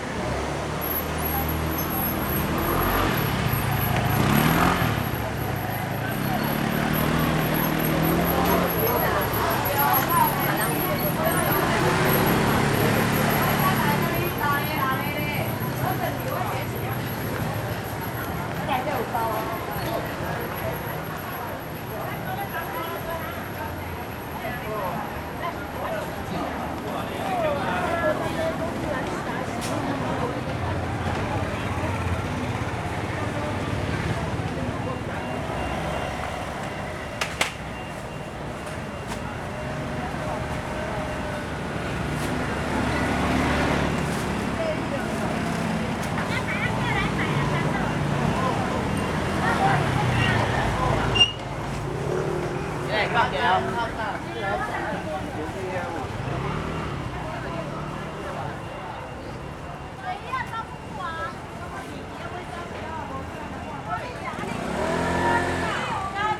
Gongyuan St., Sanchong Dist., New Taipei City - Walking through the traditional market
Walking through the traditional market, Traffic Sound
Sony Hi-MD MZ-RH1 +Sony ECM-MS907
New Taipei City, Taiwan, February 2012